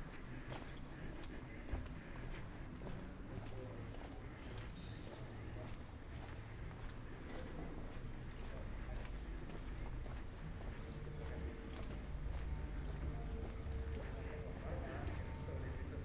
Ascolto il tuo cuore, città. I listen to your heart, city. Chapter IX - Round Noon bells and Valentino Park in the time of COVID1 Soundwalk
Sunday March 14th 2020. San Salvario district Turin, to Valentino park and back, five days after emergency disposition due to the epidemic of COVID19.
Start at 11:49 p.m. end at 12:49 p.m. duration of recording 59'30''
The entire path is associated with a synchronized GPS track recorded in the (kmz, kml, gpx) files downloadable here: